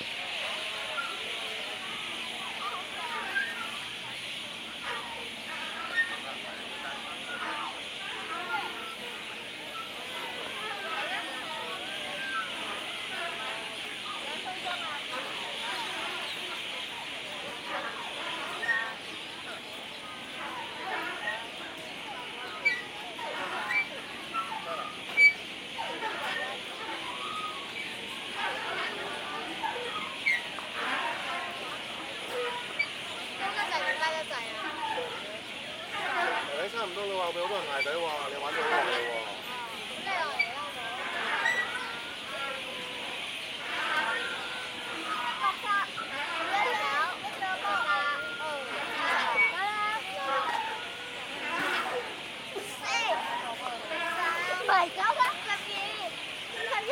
西貢海旁遊樂場 - 遊樂場百鳥鳴
傍晚時份，遊樂場好不熱鬧。
小孩結伴玩耍； 八哥樹上歌唱。
Sai Kung, Hong Kong, 16 September